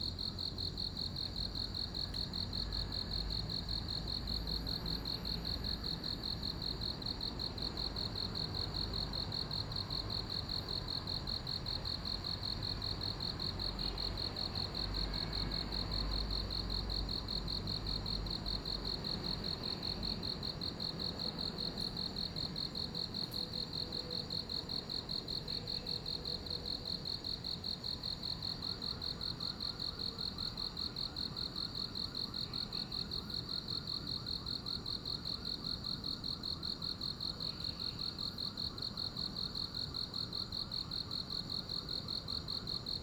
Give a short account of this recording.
In the park, Sound of insects, Zoom H2n MS+XY